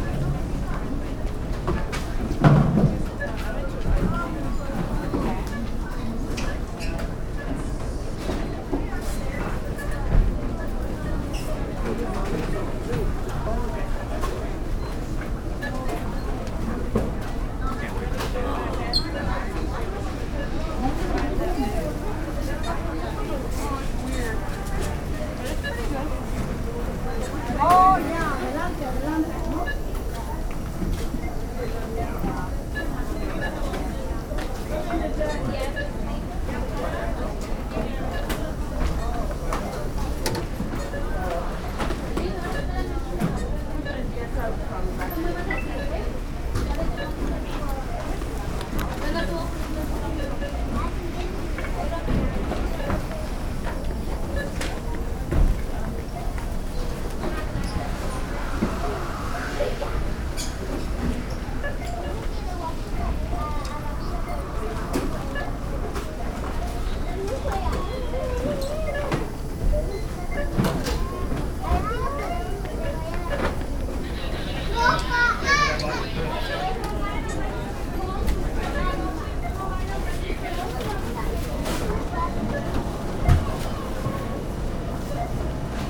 Target, Chicago, World Listening Day - Target, World Listening Day
walking past cashiers in to the store on a busy Sunday afternoon, World Listening Day, WLD, Target store
Chicago, IL, USA, 18 July 2010